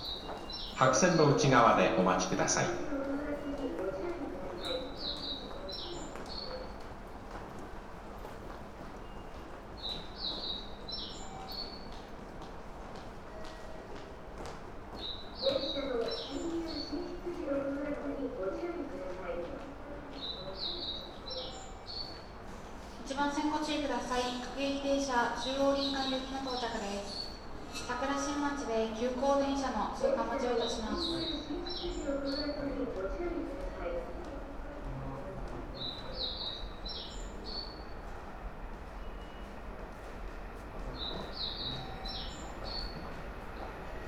hanzomon metro station, tokyo - afternoon emptiness
at the hanzomon subway station with only recorded voices speaking